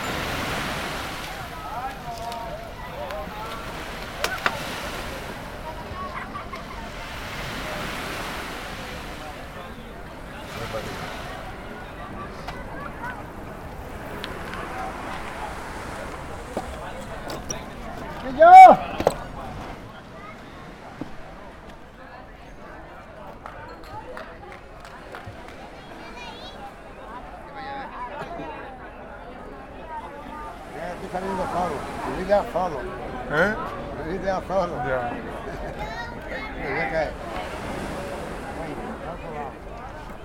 Málaga, España - Antonio el espetero
Espetero is a man how stacks the sardines in a very particular way to grill the fish vertically over olive logs embers